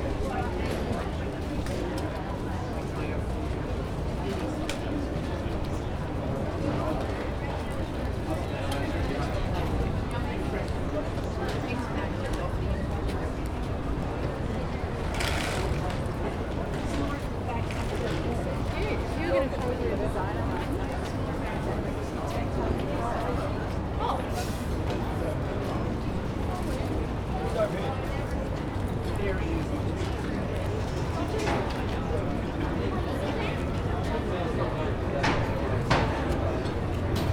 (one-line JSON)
{"title": "neoscenes: The Rocks street fair", "latitude": "-33.86", "longitude": "151.21", "altitude": "22", "timezone": "Australia/NSW"}